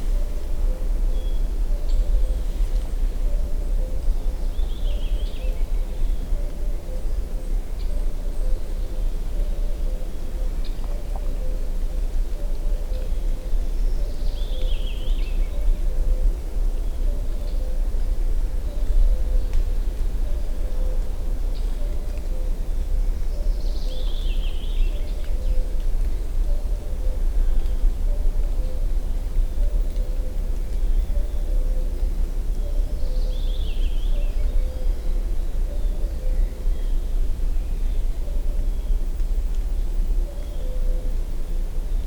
Blednik, Sasino, Polska - forest ambience
forest ambience. a heavy truck rumble nearby (mainly between 0:30 - 1:30). water drops swept from trees onto ground. cuckoo singing. (roland r-07)